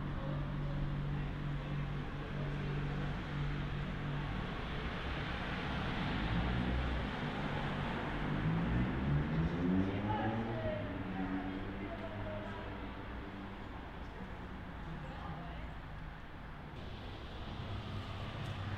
{"title": "Volos, Greece - Gallias Street", "date": "2016-02-02 16:04:00", "description": "Sounds, from the 1st floor balcony, of students having their break from an english lesson.", "latitude": "39.36", "longitude": "22.95", "altitude": "14", "timezone": "Europe/Athens"}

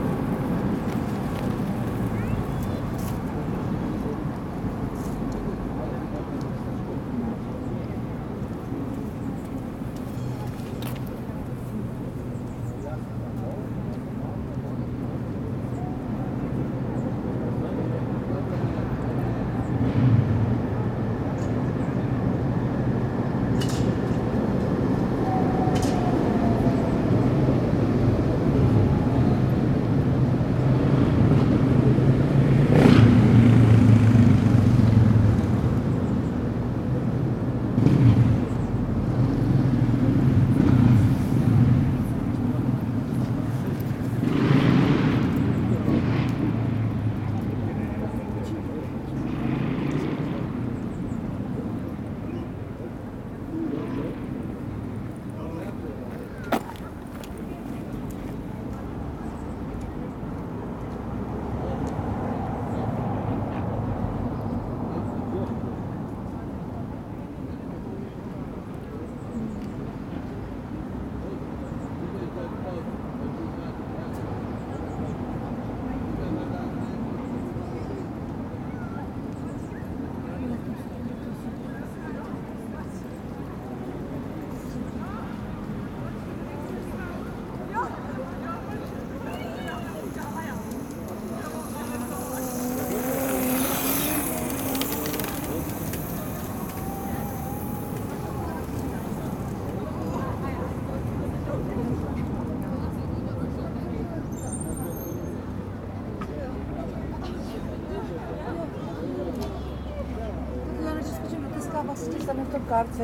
{"title": "Soukenné Náměstí, Liberec /Soukenné square, Liberec (Reichenberg) - street traffic", "date": "2020-06-08 13:50:00", "description": "Steet trafic on Soukenné square, recorded at lunch on a bench with Tascam DR-05X", "latitude": "50.77", "longitude": "15.06", "altitude": "357", "timezone": "Europe/Prague"}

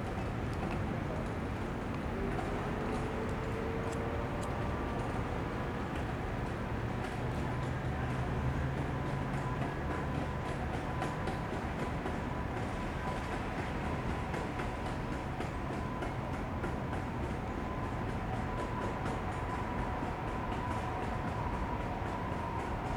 {"title": "Kaohsiung Station - The Station Square at night", "date": "2012-03-29 23:33:00", "description": "The Station Square at night, Sony ECM-MS907, Sony Hi-MD MZ-RH1", "latitude": "22.64", "longitude": "120.30", "altitude": "5", "timezone": "Asia/Taipei"}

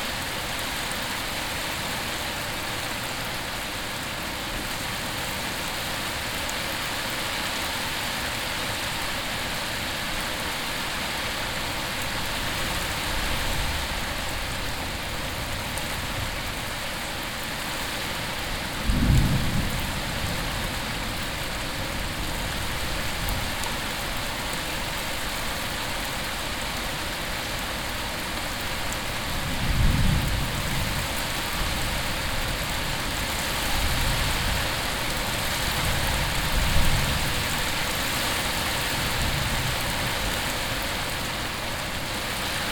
{"title": "aubignan, rain and thunderstorm", "date": "2011-08-29 17:40:00", "description": "After a hot summer week an evening thunderstorm with heavy rain. The sound of the clashing rain and thunder echoing in the valley. Recording 01 of two\ntopographic field recordings - international ambiences and scapes", "latitude": "44.09", "longitude": "5.04", "altitude": "74", "timezone": "Europe/Paris"}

